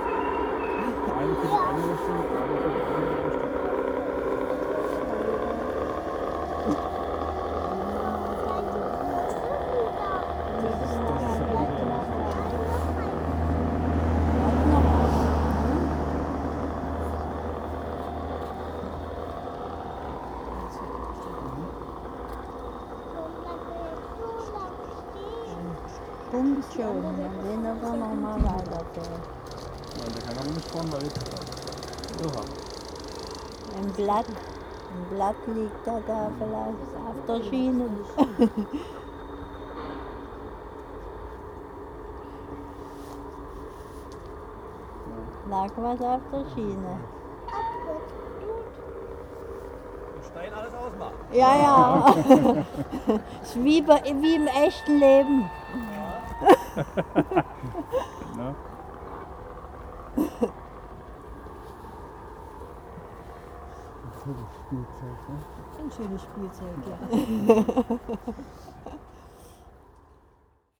Amazing Front Garden Model Railway
This front garden model train setup is stunning complete with station, different types of DB locomotives plus all the accompanying sounds. It attracts a small, but admiring, crowd of adults, children and cameras. alike.